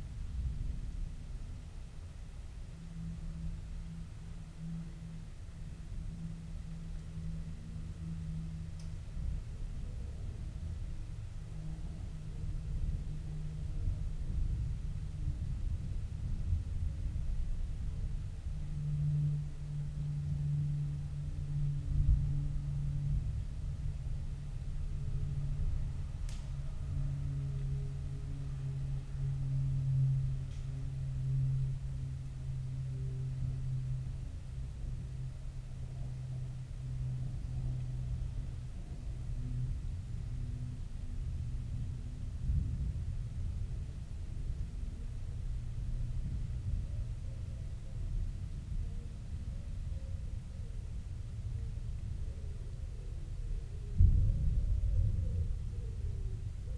inside church ... outside approaching thunderstorm ... open lavalier mics on T bar on mini tripod ... background noise ...